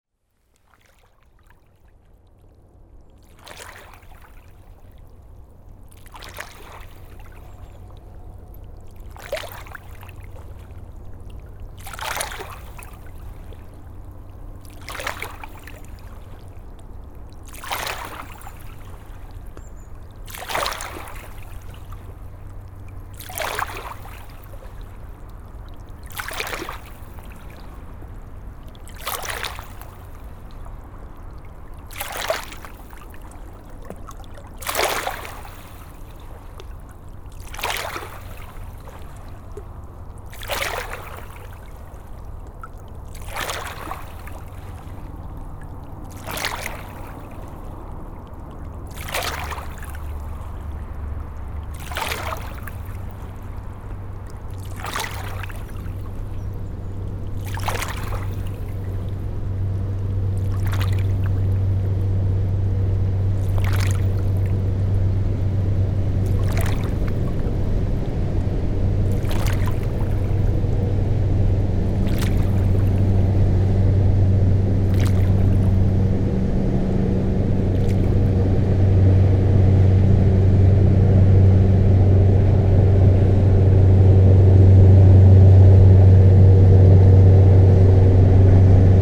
La Grande-Paroisse, France - Boat on the Seine river
Sound of the river flowing and a boat passing by on the Seine river.
28 December 2016, 09:10